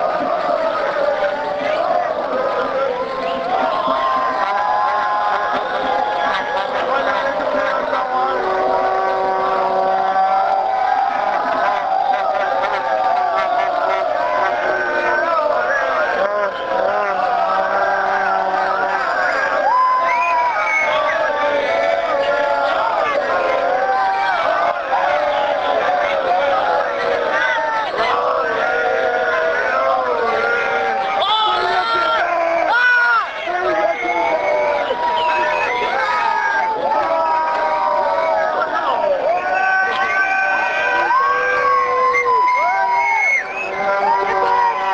equipment used: Panasonic RR-US395
Chants et Cris de la foule apres le spectacle de la Fête-Nationale du Québec au Parc Maisonneuve